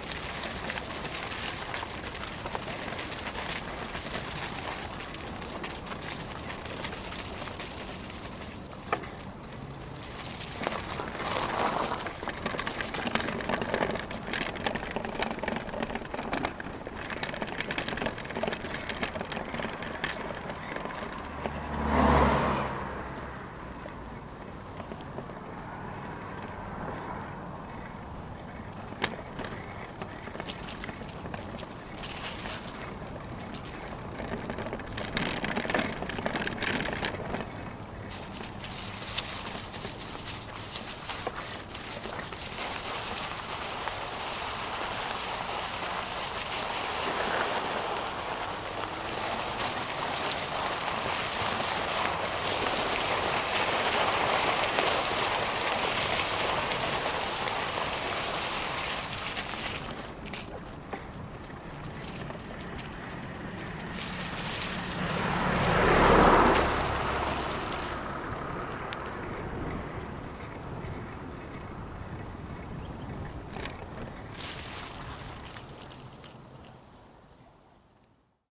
Court-St.-Étienne, Belgique - By bike in the dead leaves
Driving by bike in the dead leaves. This recording was very complicate to do, because it was sliding and I had to be careful with cars, wind and also, not to fall !